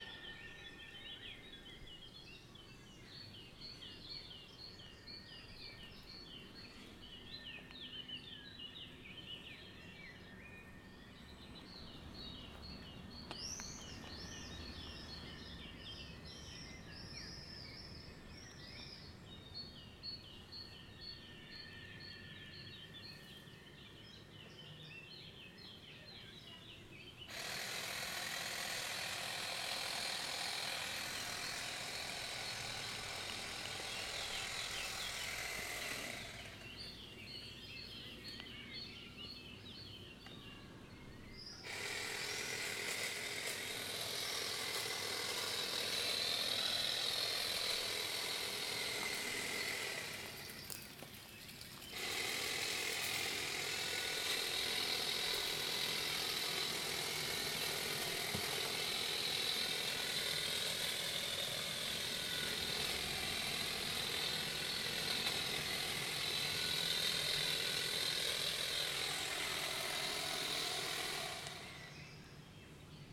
Very soft sound of a railing stimulated by softly scratching its coating with a rock and fingers and by throwing some fallen leafs at it. You can also hear the particular sound of some kind of lawnmower that is surprisingly not unpleasant to the ear.
(binaural: DPA into ZOOM H6)
Serra De Conti AN, Italy, 26 May, 16:24